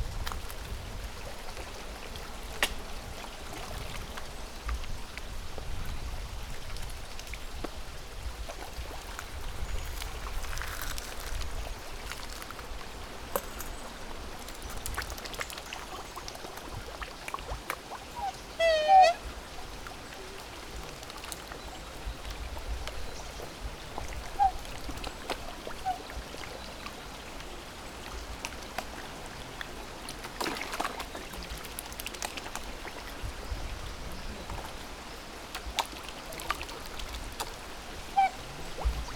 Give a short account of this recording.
a couple of friendly black swans playing about and wailing